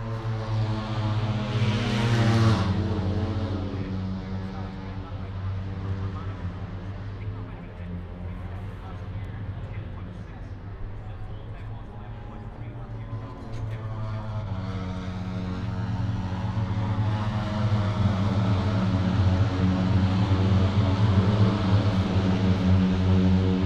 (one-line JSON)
{"title": "Silverstone Circuit, Towcester, UK - british motorcycle grand prix 2022 ... moto three ...", "date": "2022-08-05 13:15:00", "description": "british motorcycle grand prix 2022 ... moto three free practice two ... inside of maggotts ... dpa 4060s clipped to bag to zoom h5 ...", "latitude": "52.07", "longitude": "-1.01", "altitude": "157", "timezone": "Europe/London"}